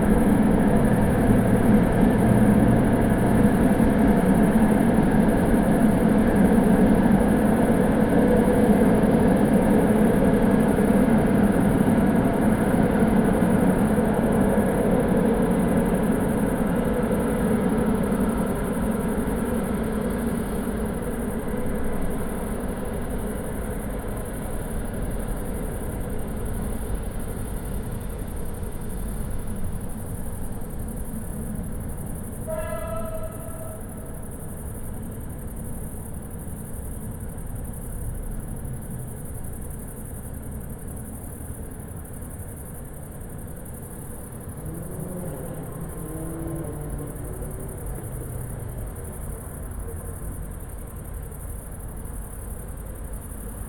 Dekerta, Kraków, Poland - (834 AB) Night crickets and trains
Recording of a summer night crickets with a train in the middle and other occasional city sounds like cars.
AB stereo recording (17cm) made with Sennheiser MKH 8020 on Sound Devices Mix-Pre6 II.